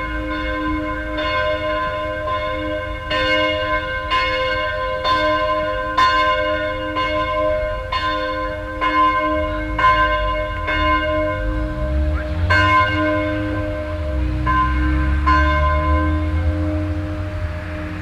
Südostviertel, Essen, Deutschland - essen, michael church, bells
An der Michael Kirche. Der Klang der 12 Uhr Glocken. Echos von den Häuserwänden und Strassenverkehr.
At the Michael church. The sound of the 12 o clock bells. Echoes from the walls of the other houses and street traffic.
Projekt - Stadtklang//: Hörorte - topographic field recordings and social ambiences
26 April 2014, 12:00